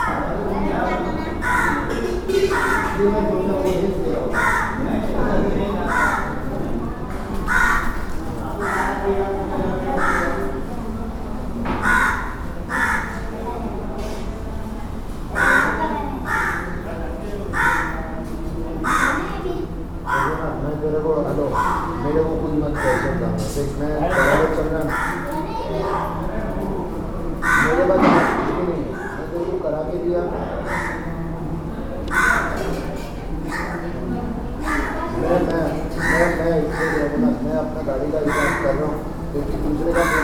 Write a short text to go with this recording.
Serene atmopshere in the temple of Babulnath, disturbed only by a crow.